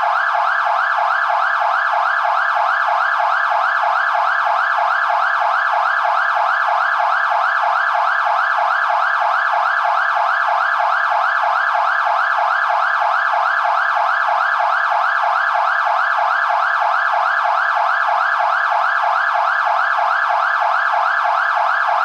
{
  "title": "hosingen, centre d'intervention, signals and alarm sounds - hosingen, centre dintervention, multifunctional siren",
  "date": "2011-09-13 11:13:00",
  "description": "This is the sound of a patrol car with a multifunctional siren that has different alarm signals.\nHosingen, Einsatzzentrum, Multifunktionssirene\nDas ist das Geräusch von einem Streifenwagen mit einer Multifunktionssirene, die verschiedene Alarmsignale hat.\nHosingen, centre d'intervention, sirène multifonctions\nCeci est le bruit d’une voiture de patrouille dotée d’une sirène multifonctions avec plusieurs signaux d’alerte.",
  "latitude": "50.01",
  "longitude": "6.09",
  "altitude": "500",
  "timezone": "Europe/Luxembourg"
}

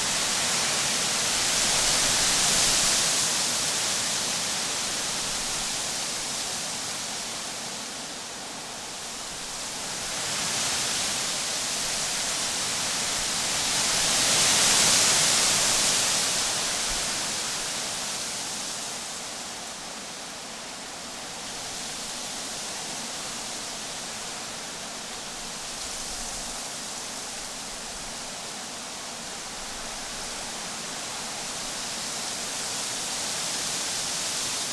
{
  "title": "Lone Pine, CA, USA - Aspen and Cottonwood Trees Blowing in Wind",
  "date": "2022-08-24 17:00:00",
  "description": "Metabolic Studio Sonic Division Archives:\nAspen and Cottonwood tree leaves rustling in the wind. Recorded in Cottonwood Canyon using H4N with to small lav microphones attached directly to the tree branches",
  "latitude": "36.44",
  "longitude": "-118.09",
  "altitude": "1643",
  "timezone": "America/Los_Angeles"
}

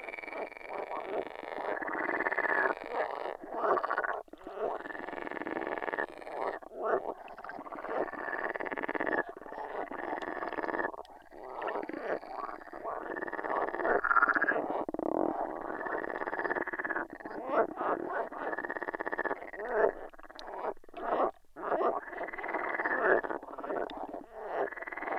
{
  "title": "Utena, Lithuania, frogs chorus on hydrophone",
  "date": "2019-05-24 20:10:00",
  "description": "very special season of the year. green beasts are everywhere, so let's listen to their chorus. hydrophone recording.",
  "latitude": "55.50",
  "longitude": "25.60",
  "altitude": "104",
  "timezone": "Europe/Vilnius"
}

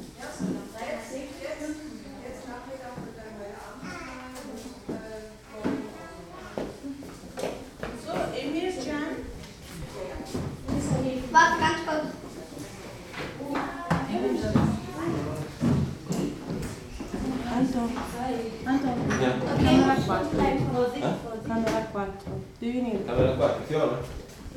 {
  "title": "Gesundheitszentrum Bergmannstr. - warteraum / waiting room",
  "date": "2009-03-03 11:00:00",
  "description": "03.03.2009 11:00 wartezimmer beim kinderarzt / pediatrist waiting room",
  "latitude": "52.49",
  "longitude": "13.39",
  "altitude": "44",
  "timezone": "Europe/Berlin"
}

